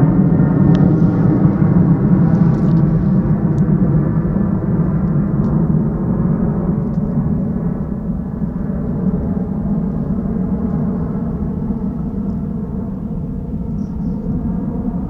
Un aereo in partenza dalla Malpensa sorvola il villaggio a 17 Km di distanza.